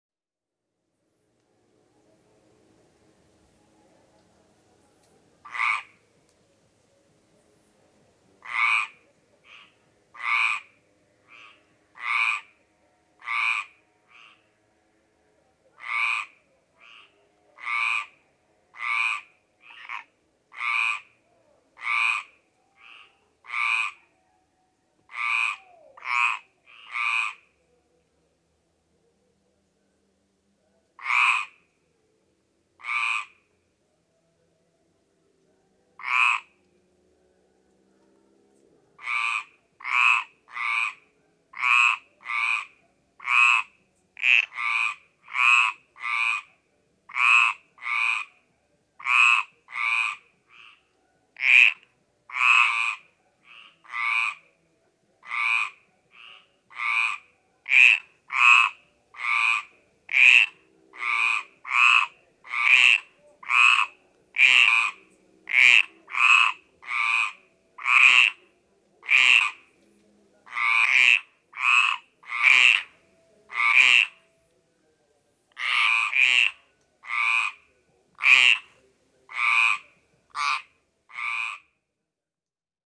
Chemin du Collet, Tourrette-Levens, France - Nocturnal Concerto of Frogs
Nocturnal concerto of common frogs by a swimmingpool in the Nice hinterland.
Set up: Tascam DR100 MK3 / Lom Usi Pro mics in ORTF.
France métropolitaine, France, 27 July, 00:30